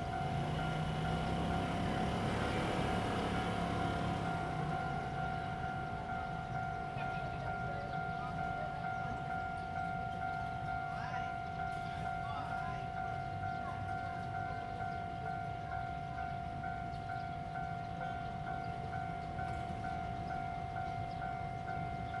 Ln., Qingnian Rd., East Dist., Tainan City - In the railway level road
In the railway level road, Traffic sound, Train traveling through
Zoom H2n MS+XY